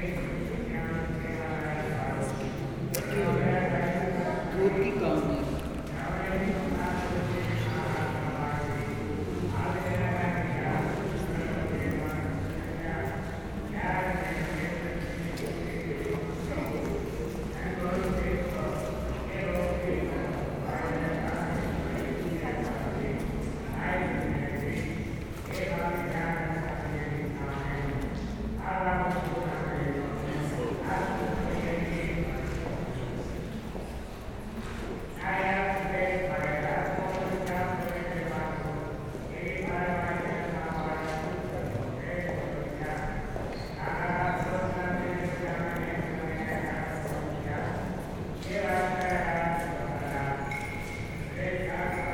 Gyumri, Arménie - Holy mysteries (sacraments)
Orthodox Deacon and Priest sing during the first part of the church service : preparing the sacraments. Everyone is moving into the church, so people make a lot of noise.
Gyumri, Armenia, 9 September 2018